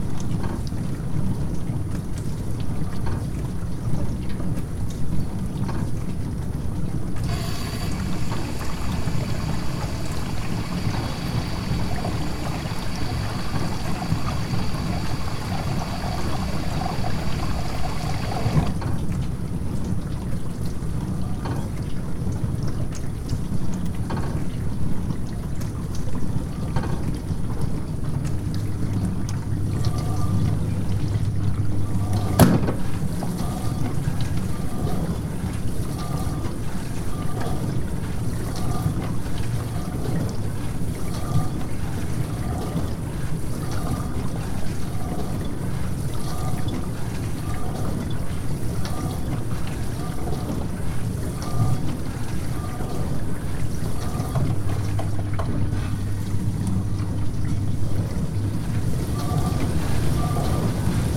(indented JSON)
{
  "title": "Różana, Siemianowice Śląskie, Polska - Dishwasher",
  "date": "2019-04-30 22:00:00",
  "description": "Dishwasher sounds\nTascam DR-100 (UNI mics)",
  "latitude": "50.31",
  "longitude": "19.04",
  "altitude": "276",
  "timezone": "GMT+1"
}